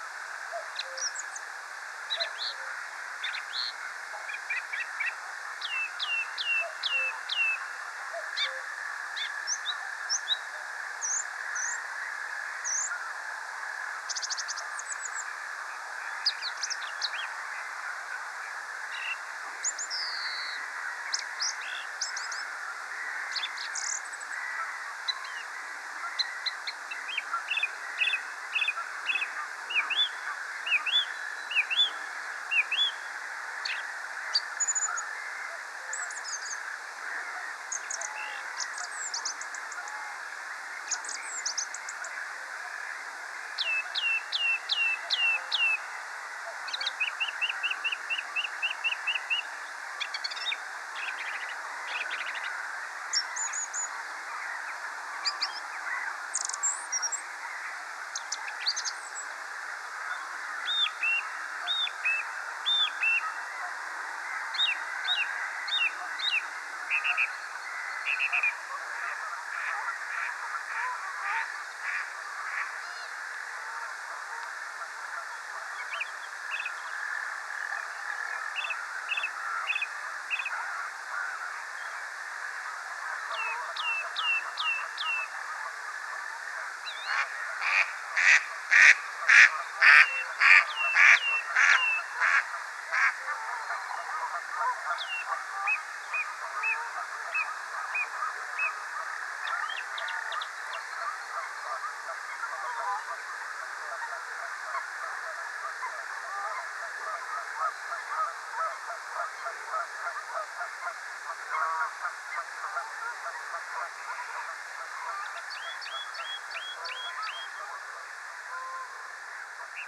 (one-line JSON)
{"date": "2018-04-21 21:40:00", "description": "Recorded end of April beginning of May at nightfall\nWalenhoek in Schelle/Niel (51°06'37.7\"N 4°19'09.6\"E)\nRecorded with Zoom H4n Pro\ncreated by Wouter lemmens", "latitude": "51.10", "longitude": "4.34", "altitude": "6", "timezone": "Europe/Brussels"}